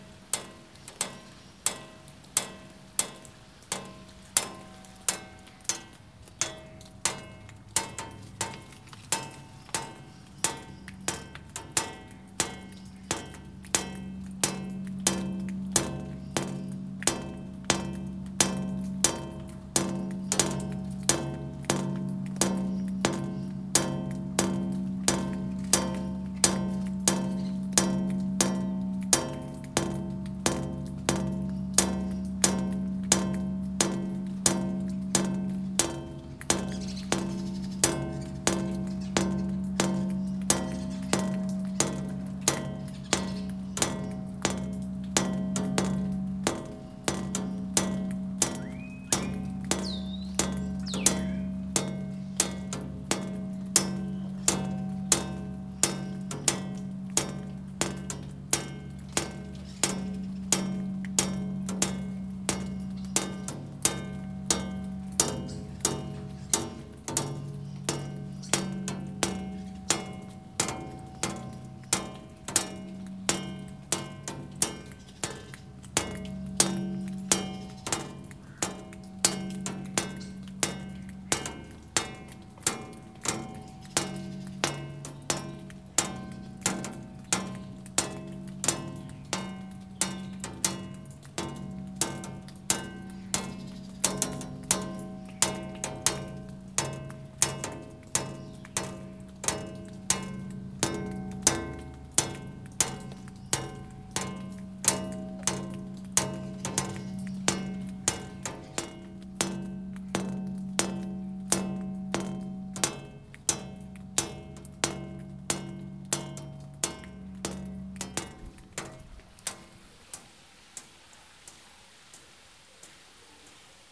Córdoba Botanic Gardens, greenhouse, rain
Raindrops activating a metal structure in a greenhouse at the botanical garden. Birdsongs in the background.